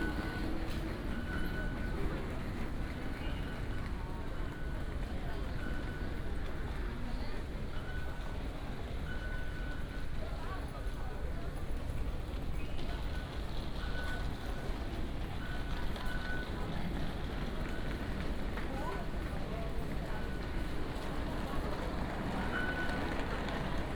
Taipei Station, Taipei City - Walk into the station
Walk into the station, Walking on the ground floor
Taipei City, Taiwan, March 2017